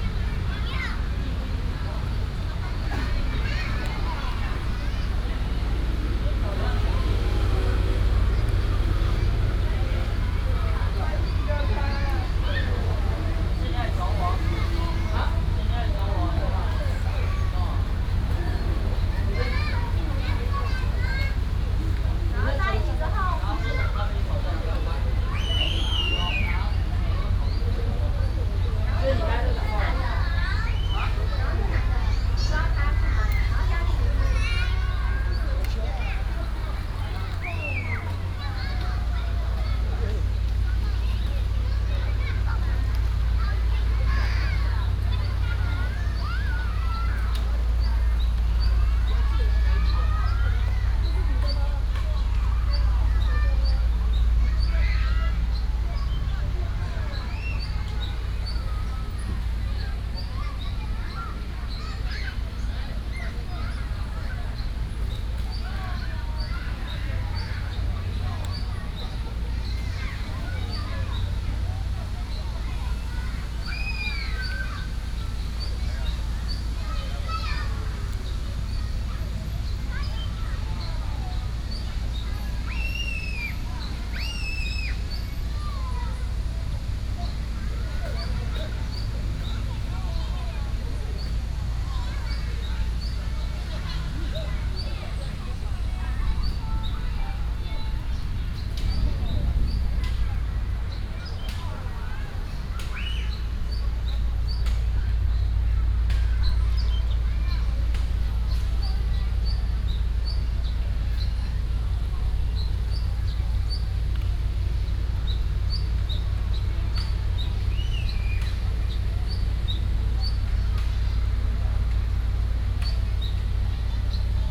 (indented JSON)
{
  "title": "Minzu Park, Taoyuan Dist. - Children play water area",
  "date": "2017-07-18 16:57:00",
  "description": "Children play water area, birds sound, traffic sound, Sewer construction sound",
  "latitude": "25.00",
  "longitude": "121.31",
  "altitude": "98",
  "timezone": "Asia/Taipei"
}